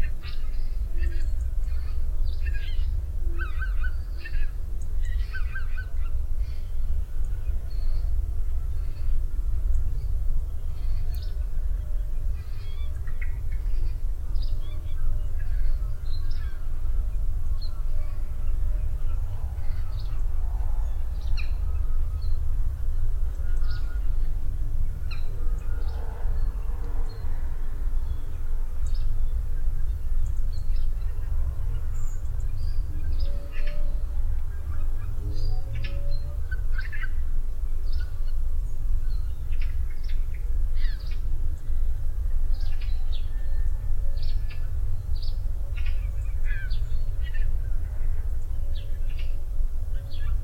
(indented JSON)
{
  "title": "ooij, hotel garden",
  "date": "2011-11-04 15:18:00",
  "description": "Early Fall. Walking in the garden of the Oortjeshekken Hotel in the early morning. The sound of several bird voices including wild gooses that gather on a nearby meadow. In the distance church bells and the sound of planes and traffic passing by\ninternational village scapes - topographic field recordings and social ambiences",
  "latitude": "51.86",
  "longitude": "5.93",
  "altitude": "14",
  "timezone": "Europe/Amsterdam"
}